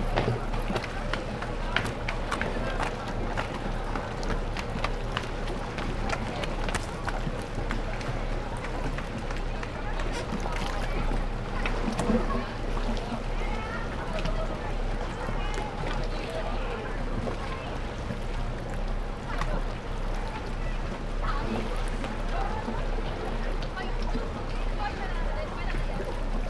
Manarola, marina - row of boats
row of boats rising and falling on waves hitting the rebounding form the pier. lots of people around, running, swimming, sunbathing on the rocks.